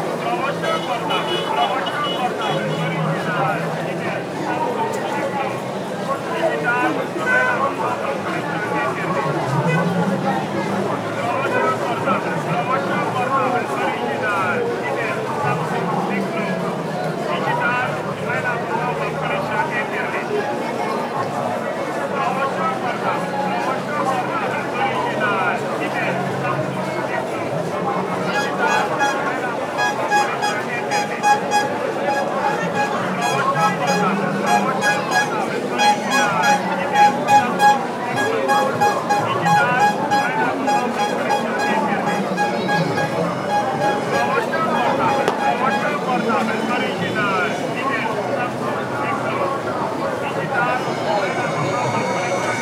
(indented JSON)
{
  "title": "Touba, Senegal - On Illa Touba - Grand Magal",
  "date": "2019-10-17 12:02:00",
  "description": "Sounds of people and vehicles on one of the main roads that runs to the Great Mosque of Touba, during the Grand Magal in October 2019. The Illa Touba was almost completely closed to vehicles to accommodate all of the people that visit the city and walk to the Mosque.",
  "latitude": "14.85",
  "longitude": "-15.88",
  "altitude": "48",
  "timezone": "Africa/Dakar"
}